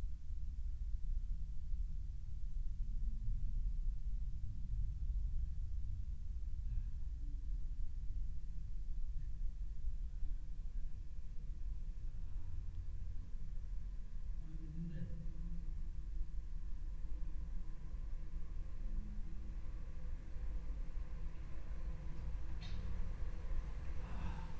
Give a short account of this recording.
Somewhat sonically isolated from the surrounding combustion engine soundscape of Seoul, this 300(?) meter tunnel is a dedicated and well used cycle-way. As cyclist enter and exit from either end so do sounds emerge from relative silence. The sonic behaviour is odd and gives the place it's own particular characteristic. All sound sources are in continuous motion.